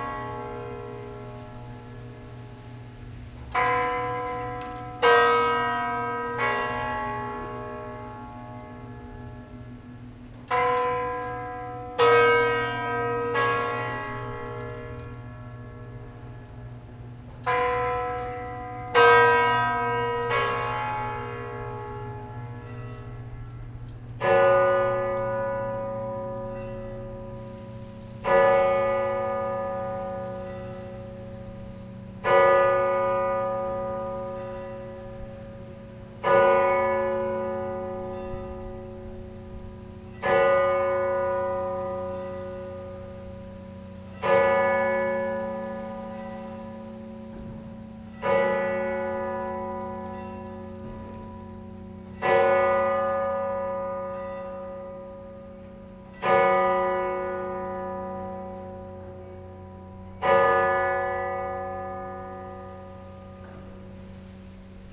Troyes, France - Cathedral bells
The cathedral bells ringing ten, from the museum. These bells are heavy and old, but it's only an heap of terrible cauldrons. Linkage are completely dead, it's urgent to make works inside the bell tower. A sad bell ringing, this could be better.